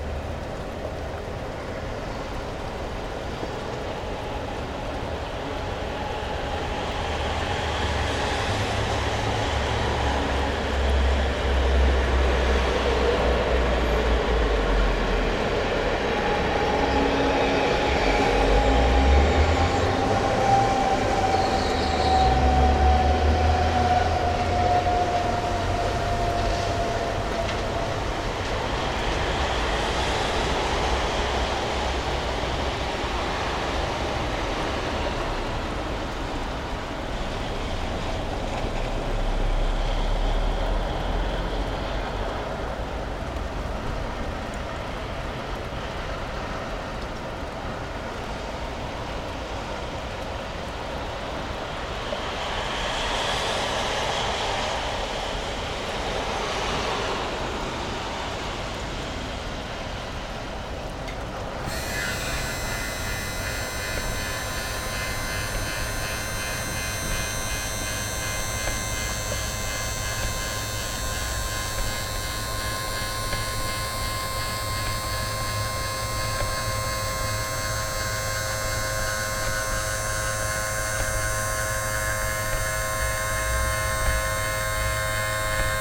Dekerta, Kraków, Poland - (754 XY) Rooftop window atmo
Recording consists of automated opening a rooftop window, evening atmosphere, and closing back the window.
XY stereo recording made with Rode NT4 on Tascam DR100 MK3.